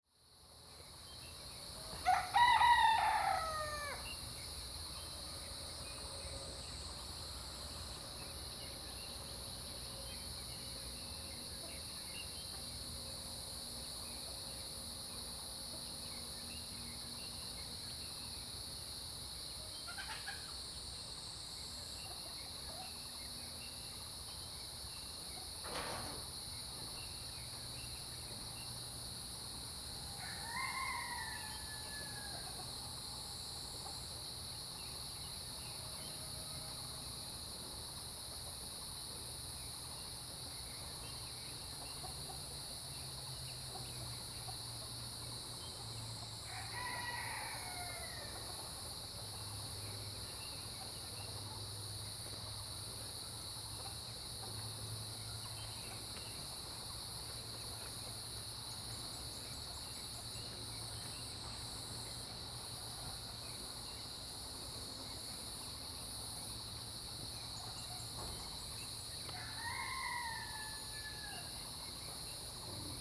Shuishang Ln., Puli Township - A small village in the morning
A small village in the morning, Bird calls, Crowing sounds, Dogs barking
Zoom H2n MS+XY